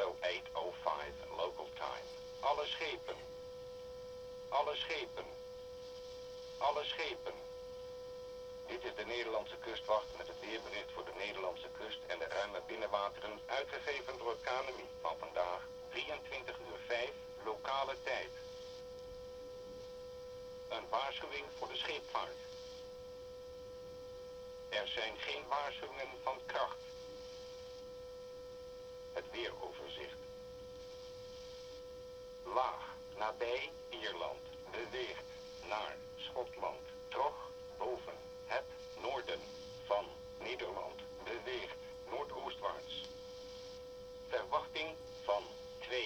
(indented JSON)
{
  "title": "workum, het zool: marina, berth h - the city, the country & me: marina, aboard a sailing yacht",
  "date": "2009-07-21 23:19:00",
  "description": "listening to the wheather forecast of the netherlands coastguard at 11 p.m.\nthe city, the country & me: july 21, 2009",
  "latitude": "52.97",
  "longitude": "5.42",
  "altitude": "1",
  "timezone": "Europe/Berlin"
}